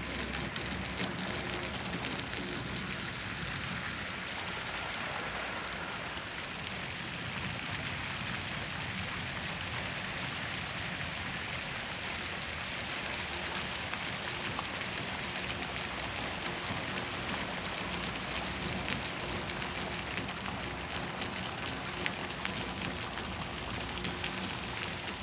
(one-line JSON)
{"title": "Śląska, Siemianowice Śląskie, Poland - market square, fountain", "date": "2018-10-18 18:10:00", "description": "Siemianowice Śląskie, market square, but no market takes place here. Sound of the fountain\n(Sony PCM D50)", "latitude": "50.31", "longitude": "19.03", "altitude": "275", "timezone": "Europe/Warsaw"}